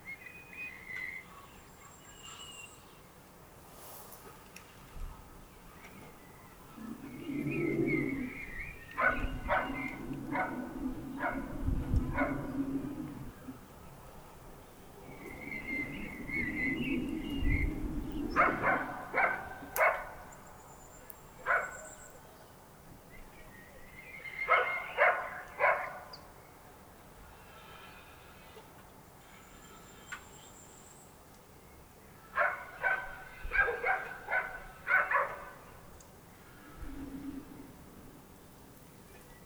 Lanuéjols, France - Rainy day
In this rainy and windy day, I just wait rain stops, but it never stops. A dog is devoured by boredom, and in this small village, nothing happens.
30 April